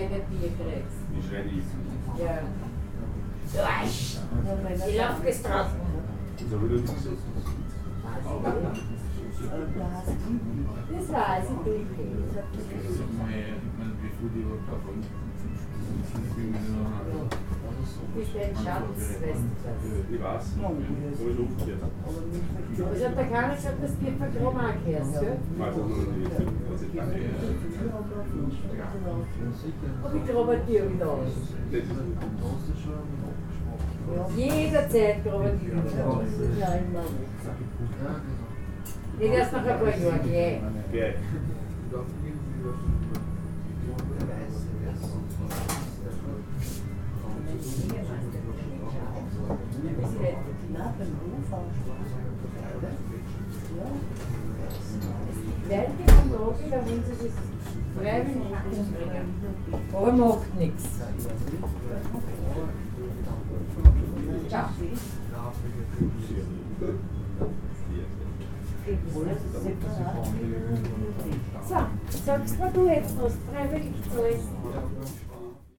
wien x - bierbeisl
bierbeisl, wielandgasse 14, 1100 wien
15 February 2015, 21:56